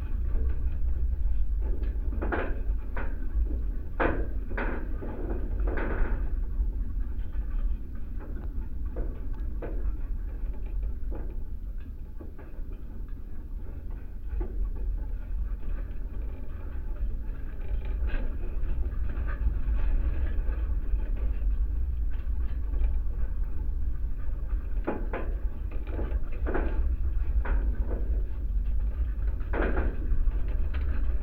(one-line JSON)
{"title": "Utena, Lithuania, fragment of fence", "date": "2018-12-15 15:10:00", "description": "contact mis on a fragment of metallic fence...windless day...", "latitude": "55.48", "longitude": "25.57", "altitude": "113", "timezone": "Europe/Vilnius"}